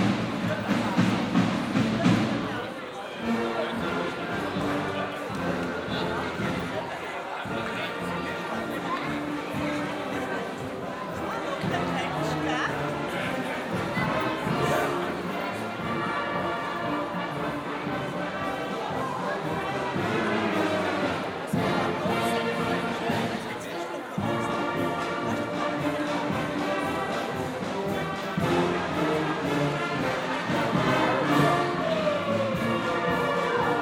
Half an hour before the Maienzug passes by - a march of children between 5 to 18, accompanied by teachers, educators and brass bands - already a brass band is playing and people are chatting in the Rathausgasse.
Aarau, Switzerland, 1 July 2016